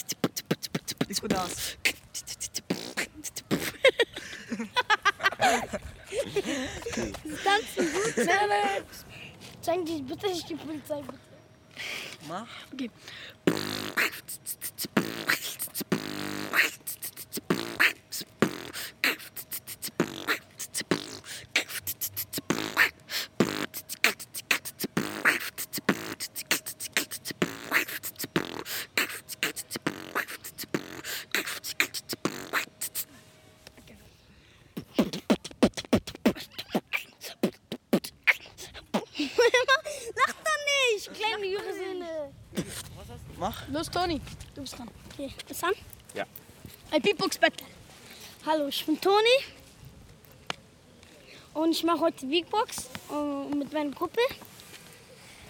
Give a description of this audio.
Wollankstraße 57A-D, Berlin - 'Beatbox Battle' von Toni und Roberto. Mitten in der Aufnahme wurde ich von Roberto, Toni und Tyson aufgegabelt, drei Jungs aus der Nachbarschaft. Wie sich herausstellte, waren zumindest zwei von ihnen äußerst talenierte Beatbox Artists, die sich angesichts des Mikrophons sofort in einen 'Beatbox Battle' stürzten. Wollankstraße 57A-D, Berlin - Beatbox battle by Toni and Roberto. In the course of recording I was interrupted by Roberto, Toni and Tyson, three teenagers from the neigbourhood. Two of them turned out to be astonishingly skilled beat box artists who immediately engaged in a 'beatbox battle'. [Hi-MD-recorder Sony MZ-NH900 with external microphone Beyerdynamic MCE 82]